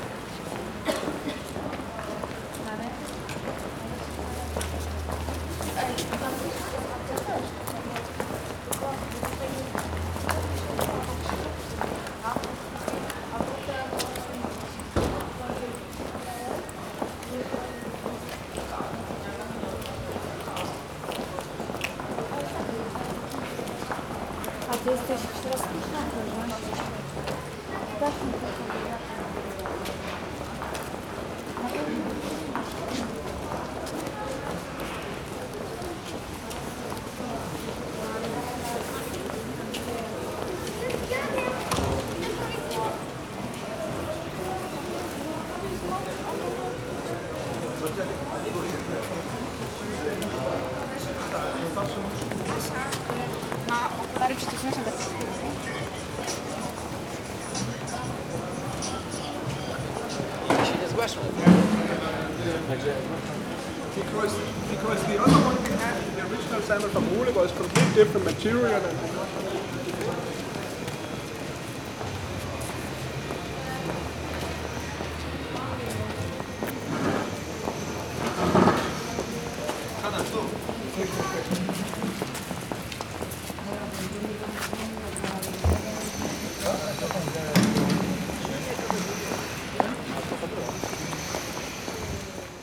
Poznan, Polwiejska Street boardwalk - broadwalk around noon
walking down the most popular boardwalk in Poznan. people walking in all directions, talking on their phones, some construction.